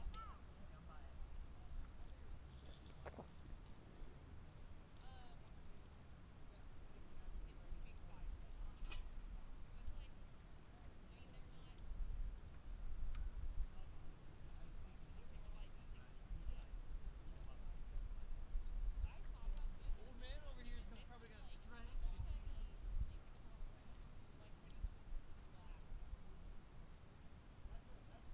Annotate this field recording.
Fire near the campground. Lone Pine, CA, just under Mt. Whitney.